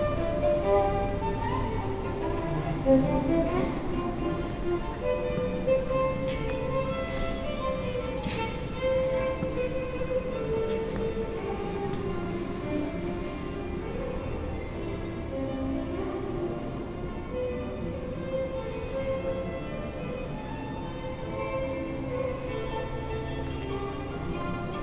Old viol player performing by the entrance to the Church of Saint Josef in Josefská Street in the Center of the town. On the end his song merging with the celebration inside.